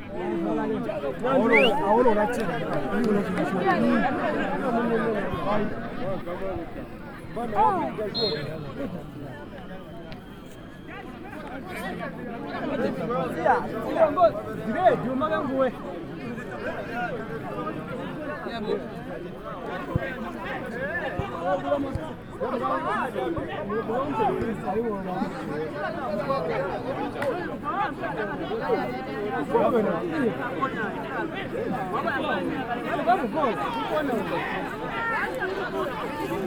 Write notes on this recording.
... the match in full swing...